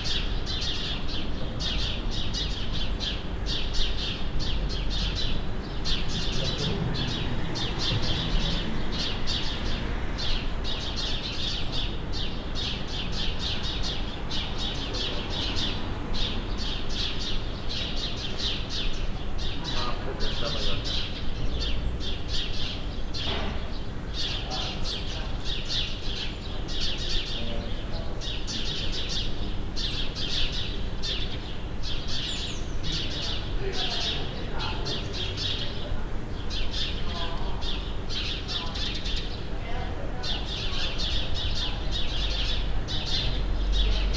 Rue de Périgord, Toulouse, France - in the library courtyard
atmosphere, bird, people walk, bells
Captation : ZoomH6